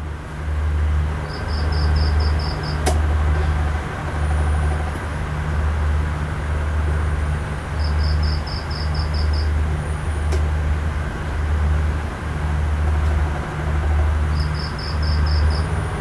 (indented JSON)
{
  "title": "Koh Lipe, Thailand - drone log 01/03/2013",
  "date": "2013-03-01 03:10:00",
  "description": "fan, cicada, distant fishing boat engine at night\n(zoom h2, binaural)",
  "latitude": "6.49",
  "longitude": "99.30",
  "altitude": "5",
  "timezone": "Asia/Bangkok"
}